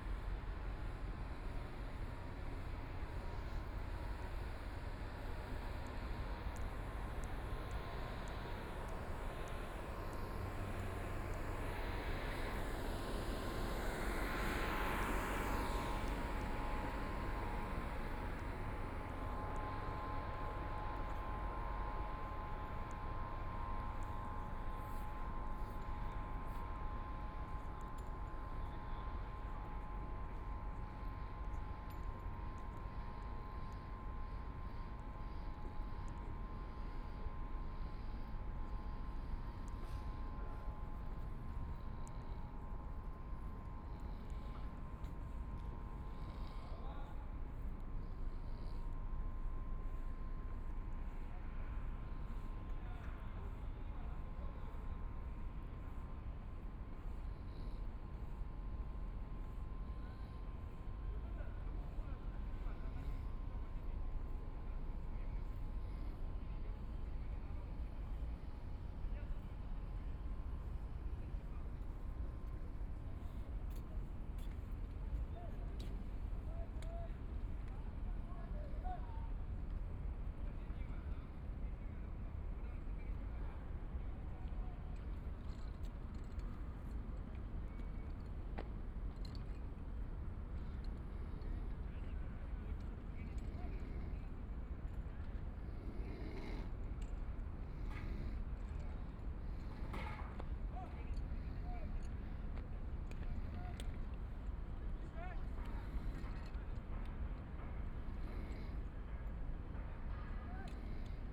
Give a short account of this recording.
Walking in the museum's top floor, Sleeping man snoring, Then go into the coffee shop cracking into the interior, Binaural recording, Zoom H6+ Soundman OKM II (Power Station of Art 20131202-4)